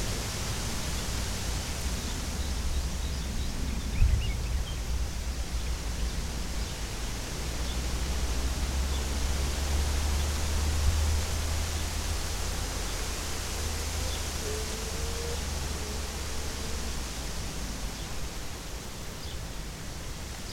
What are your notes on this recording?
Un hypolaïs polyglotte chante dans un buisson agité par le vent, quelques bruits de la circulation automobile. Sous un soleil de plomb je m'abrite sous un parapluie multicolore qui peut aussi servir d'abri antivent pour les micros sur pied.